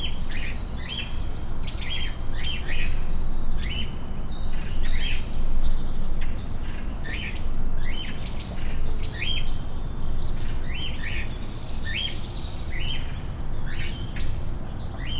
Brussels, Rue du Puits
Kinsendael, Plateau England, henhouse.
SD-702, Me64, NOS